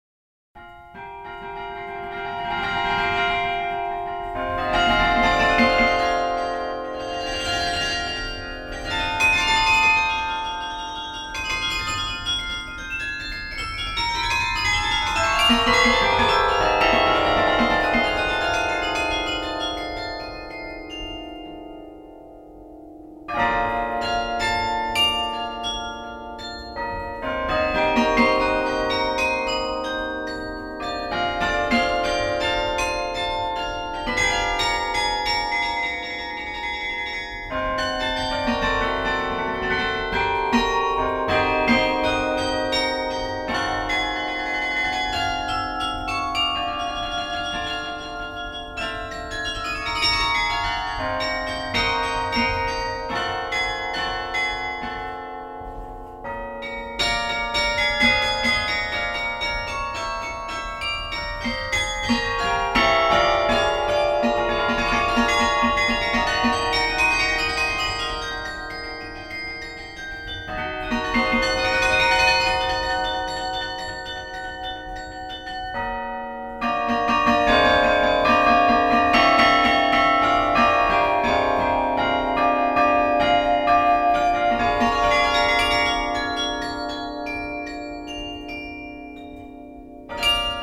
The Gembloux carillon, played by Gilles Lerouge, a carillon player coming from France (Saint-Amand-Les-Eaux). Recorded inside the belfry by Emmanuel Delsaute.